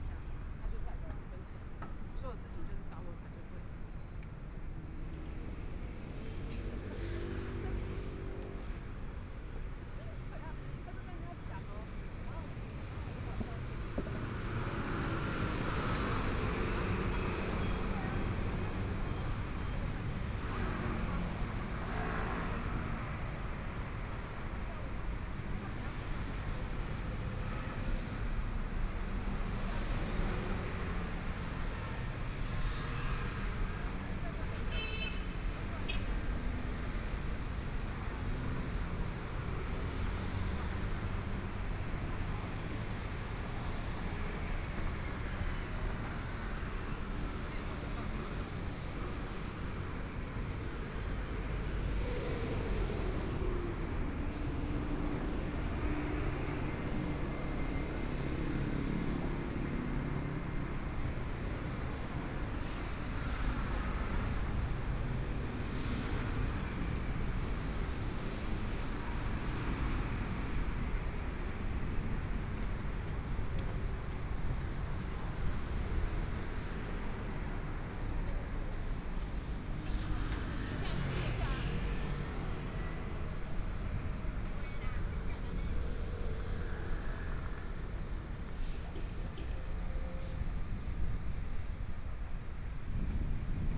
Walking in the small streets, Traffic Sound, Binaural recordings, Zoom H4n+ Soundman OKM II
Taipei City, Taiwan, 21 January